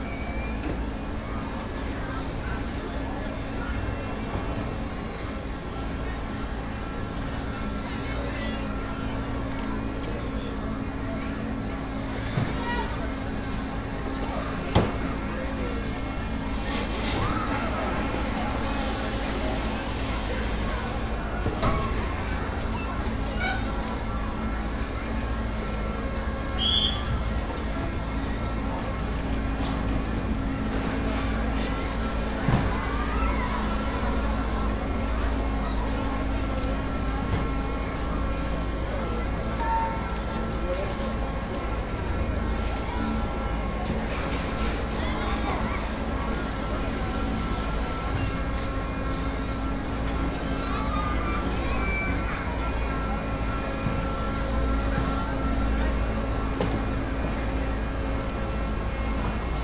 Binaural recording of Les Machines de l'île.
recorded with Soundman OKM + Sony D100
sound posted by Katarzyna Trzeciak
France métropolitaine, France, 22 August 2019